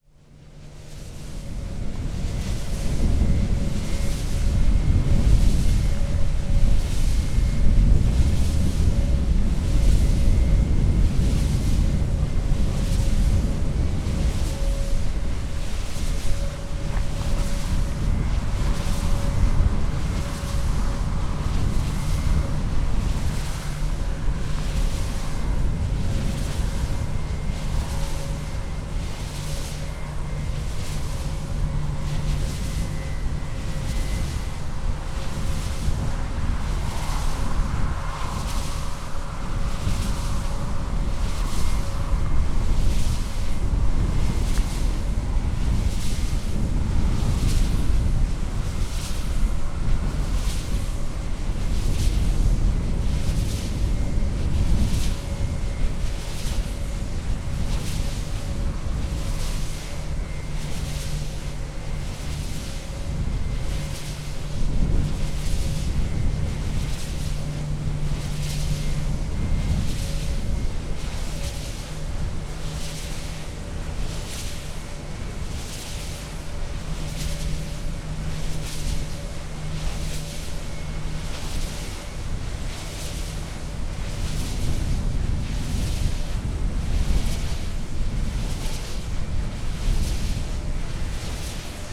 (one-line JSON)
{"title": "field east from Wicko - wind turbine", "date": "2015-08-16 00:04:00", "description": "standing under a huge wind turbine at midnight. it was totally dark, only a red blinking warning light at the top of the tower poorly illuminated the structure and the ground around it. the swoosh of the enormous propeller together with the ripping wind were breathtaking and pretty scary. at the same time the sound was very hypnotic. the wail of the turbine went up and down as if a plane was landing and taking off.", "latitude": "54.68", "longitude": "17.63", "altitude": "20", "timezone": "Europe/Warsaw"}